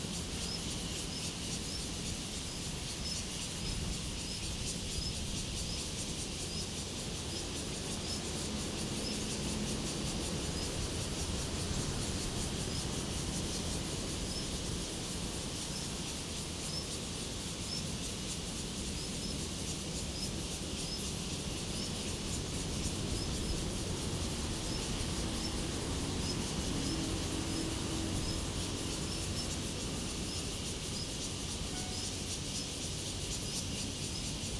{"title": "Taitung County, Taiwan - Cicadas sound", "date": "2014-10-09 10:12:00", "description": "Cicadas sound, Traffic Sound\nZoom H2n MS+XY", "latitude": "23.40", "longitude": "121.48", "altitude": "26", "timezone": "Asia/Taipei"}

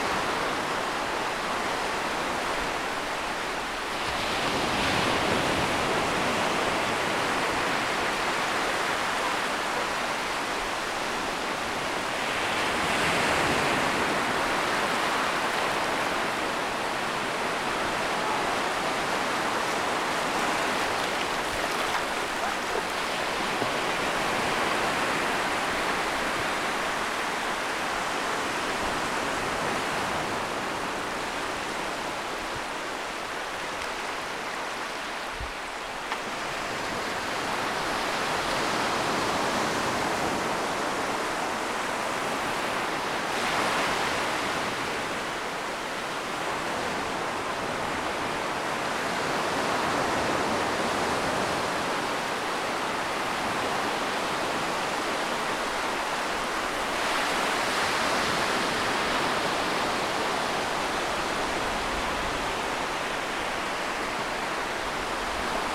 {"title": "Tintagel, UK - Bossiney Beach in the water", "date": "2022-07-15 14:00:00", "description": "After a treacherous decent to the beach by rope on a very hot day it was nice to stand ankle deep in the water and record. Did get a wave splash up my shorts though Oopsie!", "latitude": "50.67", "longitude": "-4.74", "altitude": "35", "timezone": "Europe/London"}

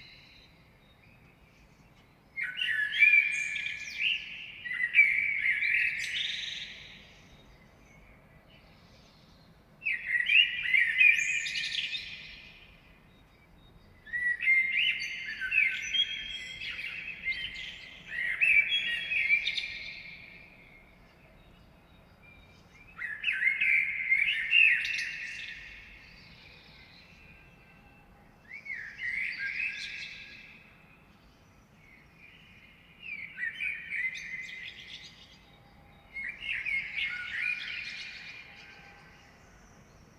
{"title": "Oświecenia neighbourhood at dawn - Kraków, Polska - (636) AB Common Blackbird at dawn", "date": "2020-05-15 04:00:00", "description": "Wide (85cm) AB stereo recording made from a balcony. No processing added - all the echos and reverberance are natural and comes from concrete reflections.\nSennheiser MKH 8020, Sound Devices MixPre6 II", "latitude": "50.09", "longitude": "19.99", "altitude": "253", "timezone": "Europe/Warsaw"}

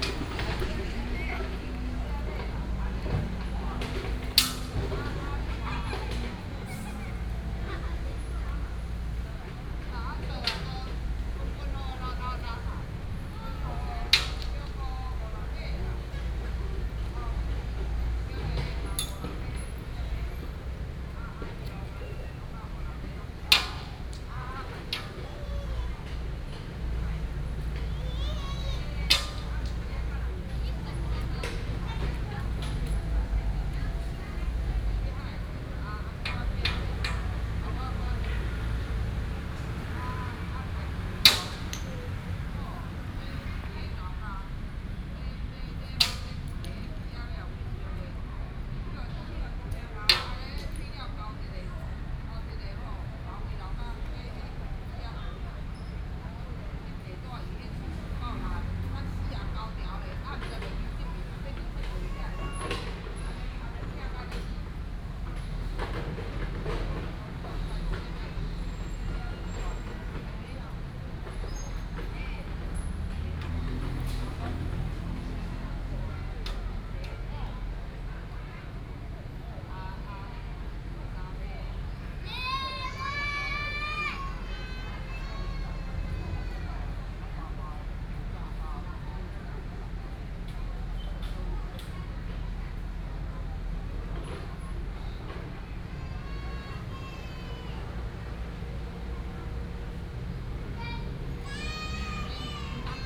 {"title": "四維公園, Banqiao Dist., New Taipei City - in the Park", "date": "2015-07-29 15:47:00", "description": "in the Park, Traffic Sound, Kids play area, Next to the school is under construction", "latitude": "25.03", "longitude": "121.46", "altitude": "16", "timezone": "Asia/Taipei"}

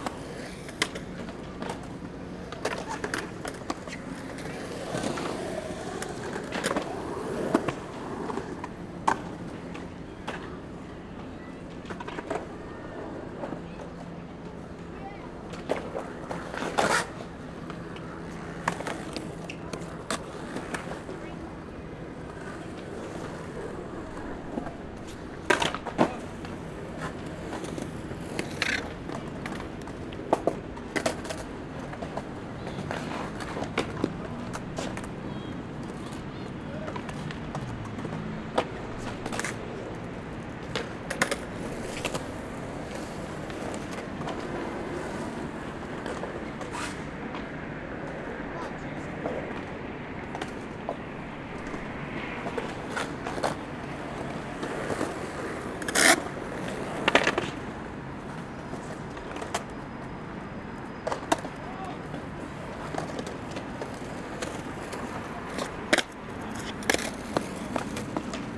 {"title": "N Moore St, New York, NY, USA - Skating Sounds, Pier 25 Hudson Park", "date": "2019-06-21 14:30:00", "description": "Skating Sounds, Pier 25 Hudson Park.\nZoom h6", "latitude": "40.72", "longitude": "-74.01", "timezone": "America/New_York"}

